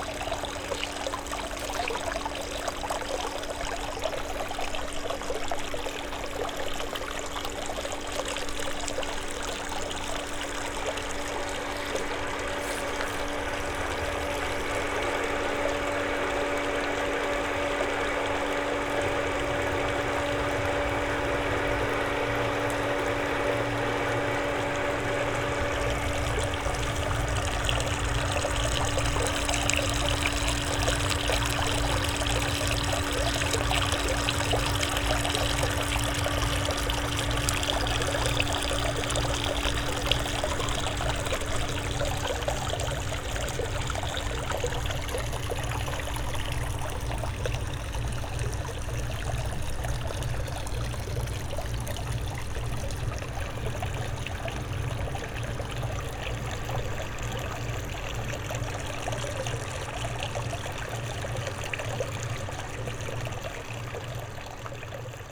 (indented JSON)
{
  "title": "Funkhaus Nalepastr., Berlin - fountain",
  "date": "2013-06-22 10:30:00",
  "description": "fountain at Funkhaus Nalepastr.\n(SD702 Audio Technica BP4025)",
  "latitude": "52.48",
  "longitude": "13.50",
  "altitude": "35",
  "timezone": "Europe/Berlin"
}